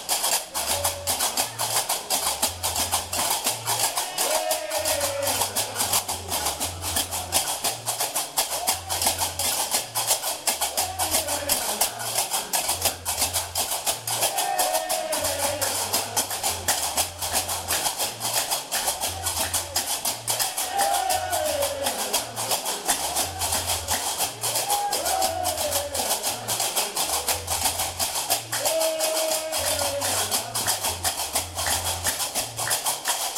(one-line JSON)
{"title": "Marrakech: Trance gnouwa party/ Trance Gnouwa Abend", "latitude": "31.63", "longitude": "-7.99", "altitude": "466", "timezone": "Europe/Berlin"}